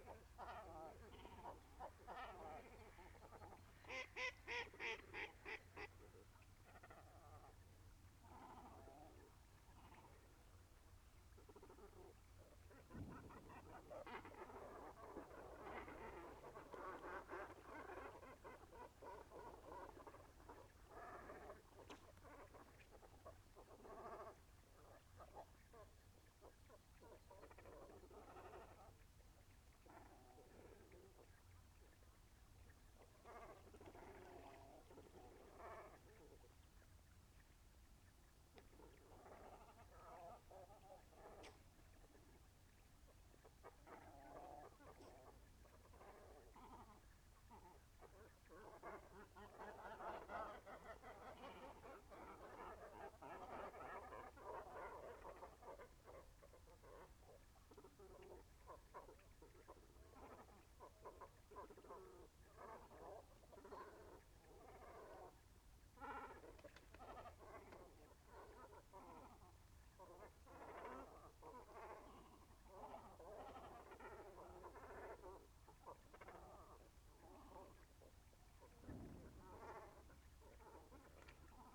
a group of cormorants in the trees of the headland and two bathing swans
the city, the country & me: january 1, 2015